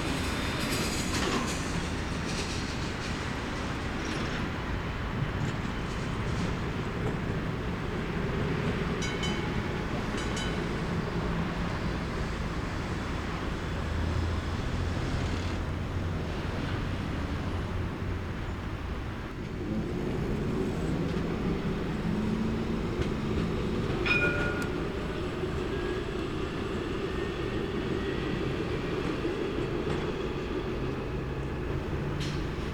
urban initiatives, urban design, landscape architecture, peculiar places